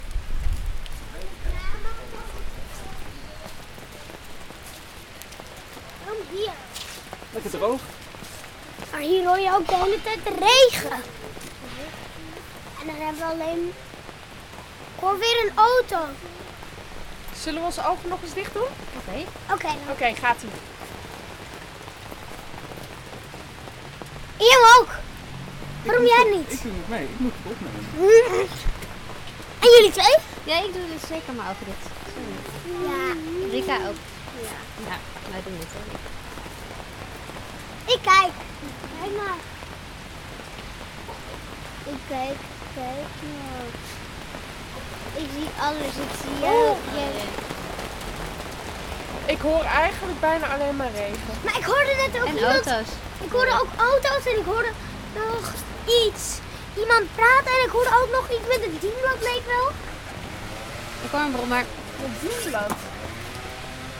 Van der Helstplein, Amsterdam, Nederland - Onder de paraplu/ Underneath the umbrella
(description in English below)
Door de harde regen was het erg rustig op straat, des te mooier klonk het getik van de regen onder de parasol waar we moesten schuilen.
Because of the hard rain it was very quiet on the streets, the better the sound of the ticking rain underneath the umbrella where we had to take shelter.